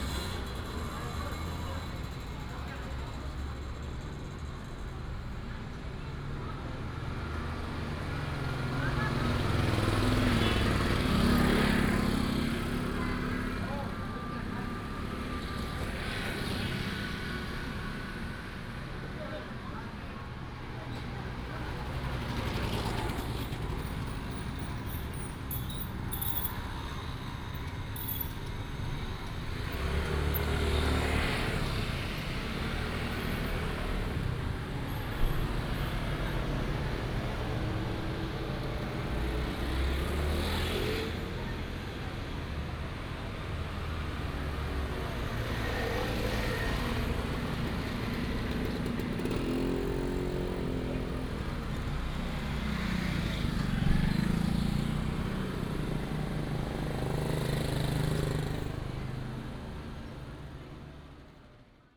in the market, motorcycle, Vendors, Construction sound
Tuku Township, Yunlin County, Taiwan